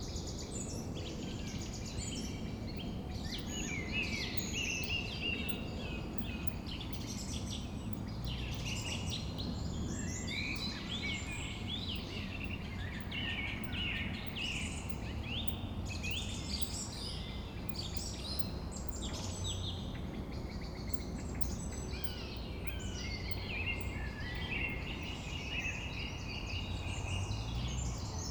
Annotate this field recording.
little pond in the forest, spring, this area is called Ton (clay), from former clay mining. evening birds, party sound, planes and cars in the distance. i remember this place to be more quiet. this memory may be wrong.